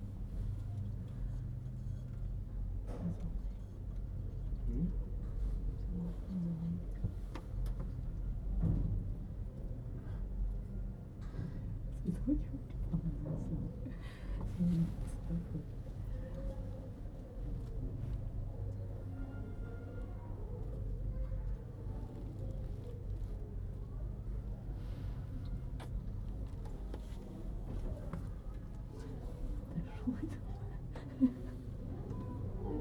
Parc du Chateau, Nice, France - Descending in the lift from the park to the street
The Parc du Chateau is on a hill to the east of Nice's beaches. To get up there you can either trek up the stairs or road, or you can take the lift. This recording was made (with a ZOOM H1) on the lift journey down from the hill top to street level. When you exit the lift you walk down a tiled passage and this was filled with a long queue of people waiting to use the lift.